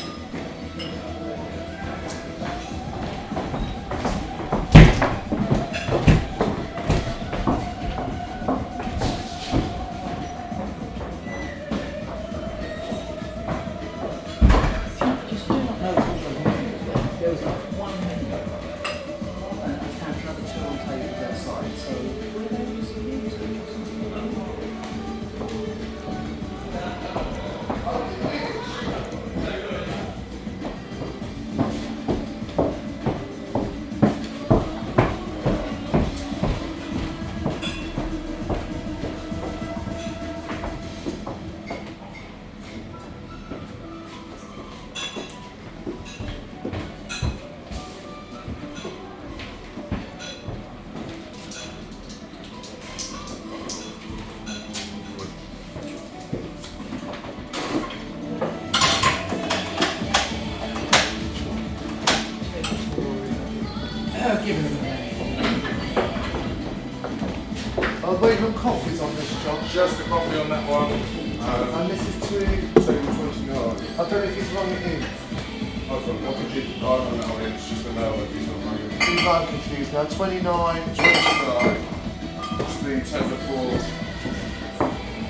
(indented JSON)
{
  "title": "Ventnor, Isle of Wight, UK - British bar sounds (John L Armstrong)",
  "date": "2014-05-05 13:00:00",
  "description": "Ventnor restaurant bar during local arts festival, customers chatting, ordering drinks and food. Waiters carrying food to dining tables.",
  "latitude": "50.59",
  "longitude": "-1.21",
  "altitude": "15",
  "timezone": "Europe/London"
}